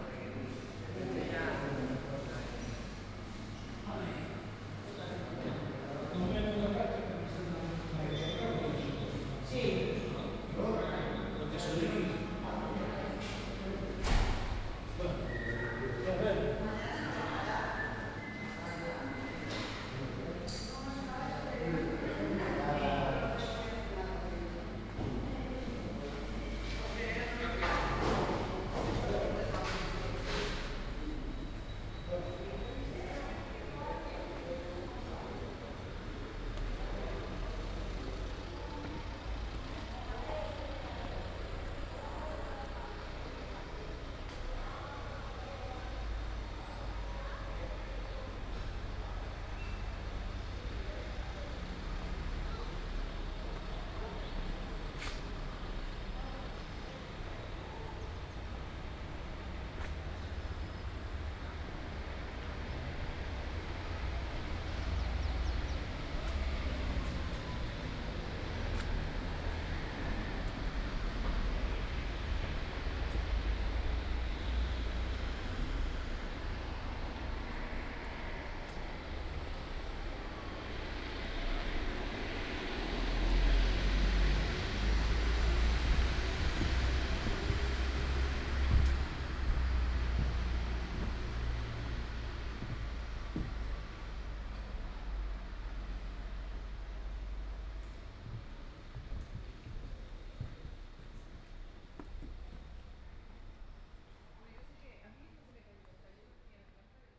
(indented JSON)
{
  "title": "Carrer Mar, 12, 17497 Portbou, Girona, Spagna - PortBou Walk day2",
  "date": "2017-09-28 10:47:00",
  "description": "start at former Hotel Francia where Walter Benjamin suicided on September 26th 1940, staircases, Plaça Major, church (closed), station from the entry tunnel, station hall, on railways new and old, market, carre Escultor Mares, Career de La Barca.",
  "latitude": "42.43",
  "longitude": "3.16",
  "altitude": "7",
  "timezone": "Europe/Madrid"
}